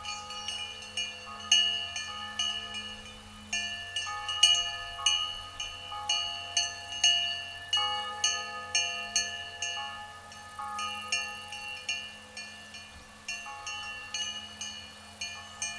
Billy-lès-Chanceaux, France - Bell and bell
In a pasture field, a beautiful blend with cow bell and church bell. The Seine river is flowing quietly at the backyard.
29 July 2017, 19:00